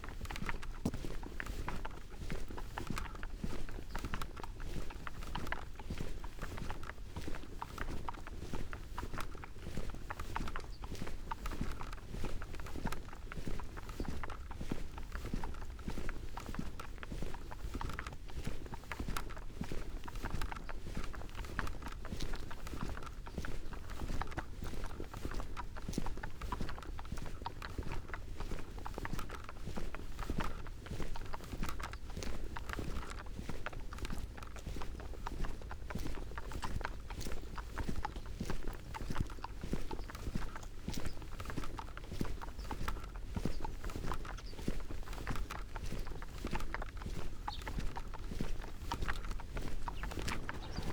Post Box, Malton, UK - walks with a parabolic ... horse following ...
walks with a parabolic ... horse and rider following from distance then eventually catching up ... bird calls ... blue tit ... yellowhammer ... collared dove ... tree sparrow ... background noise ... footfalls ... recordist ... all sorts ...
Yorkshire and the Humber, England, United Kingdom, 30 December